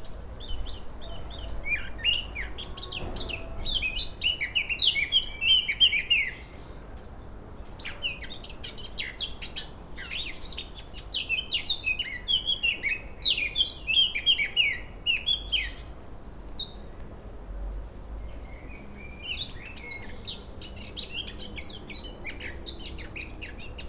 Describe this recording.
birds in the garden, wind in the trees, sound of the city, a car passes in the street